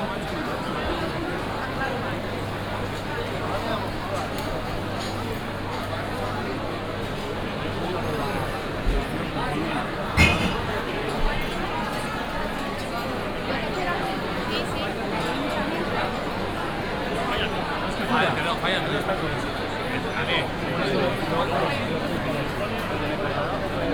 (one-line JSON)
{"title": "Marid, Plaza San Miguel - Mercado de San Miguel", "date": "2014-11-29 15:56:00", "description": "(binaural) Entering and walking around the San Miguel market. the hall is packed. people getting their tapas, paellas, calamari sandwiches, sweets, coffees, wines, whatsoever and dining at the tables, talking, having good time.", "latitude": "40.42", "longitude": "-3.71", "altitude": "663", "timezone": "Europe/Madrid"}